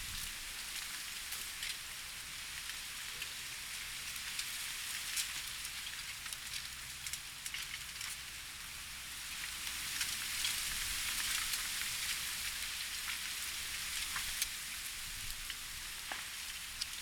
1/arundo tanz - arundo tanz